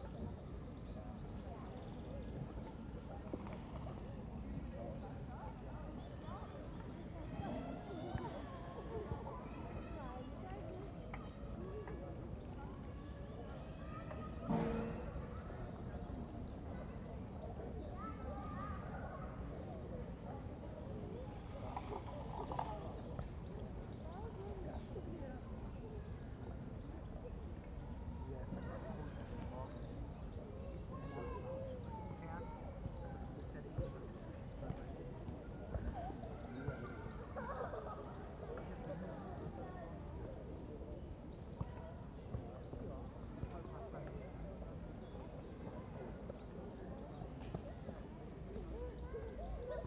Palace Park, Am Schloßpark, Berlin, Germany - 2 days of Covid-19 lockdown: park in bright sun, biting cold
2 days after the Covid-19 restriction have banned meetings of more than 2 people, except families living together. Gone are the large groups of teenagers and 20 somethings socializing. Now it's single people huddled against the wind or mum/dad kicking a football with a young son (no daughters to be seen). But maybe this is partly because it's so cold. Birds are singing less than a few days ago. And during recording no planes flew overhead (the park is directly below the Tegel flight path and normally one passes every 3 or 4 minutes).